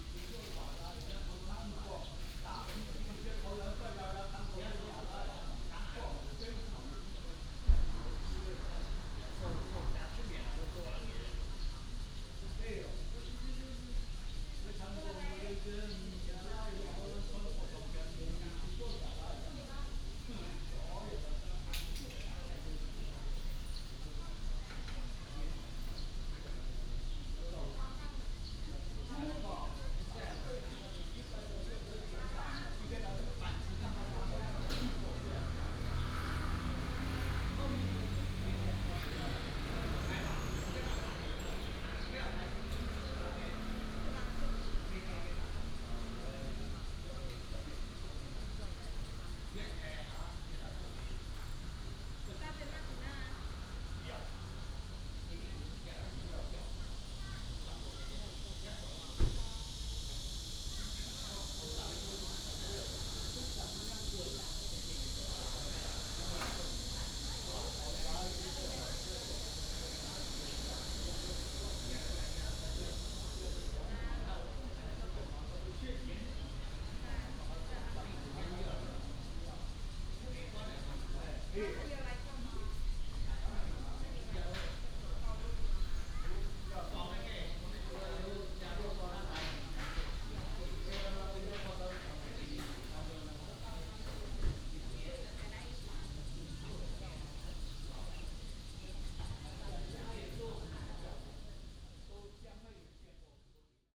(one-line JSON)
{"title": "福星宮, Pingzhen Dist. - In the square of the temple", "date": "2017-07-28 09:30:00", "description": "In the square of the temple, Cicada cry, birds sound, traffic sound, Old man, The weather is very hot", "latitude": "24.91", "longitude": "121.21", "altitude": "169", "timezone": "Asia/Taipei"}